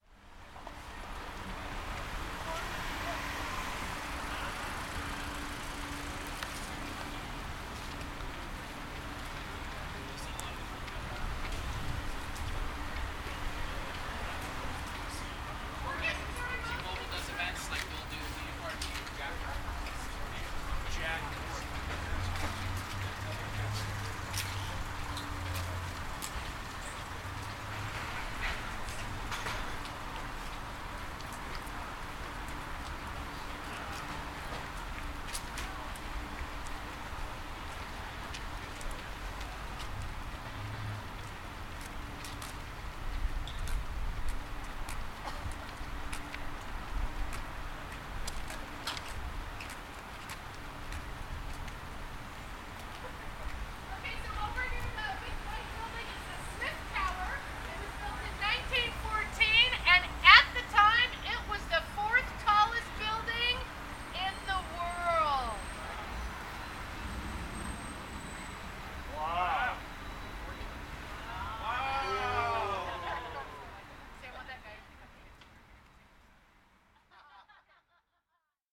Above-ground, observing Smith Tower. Traffic, pedestrian chatter. "Bill Speidel's Underground Tour" with tour guide Patti A. Stereo mic (Audio-Technica, AT-822), recorded via Sony MD (MZ-NF810).
WA, USA, 12 November 2014